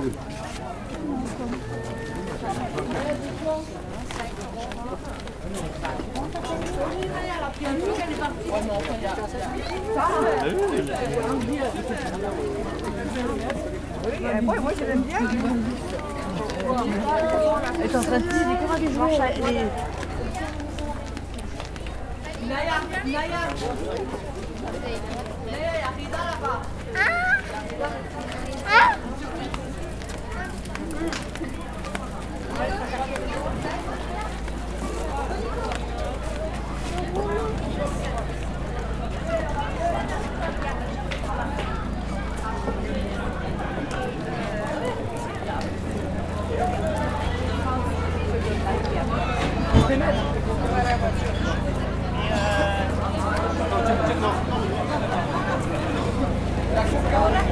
Marché aux puces Dimanche 1er Juin 2014, déambulation à travers le marché, enregistrement Zoom H4N